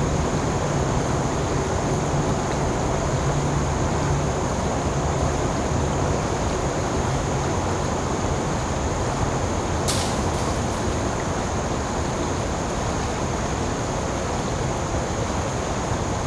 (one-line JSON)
{"title": "Palisades W Trail, Atlanta, GA, USA - Calm River", "date": "2020-10-01 16:42:00", "description": "A calm section of the Chattahoochee river. Water and insects are audible throughout the recording. There's a constant hum of traffic in the background due to close proximity to the highway.\nRecorded with the unidirectional microphones of the Tascam Dr-100miii. Minor EQ was done in post to reduce rumble.", "latitude": "33.88", "longitude": "-84.44", "altitude": "237", "timezone": "America/New_York"}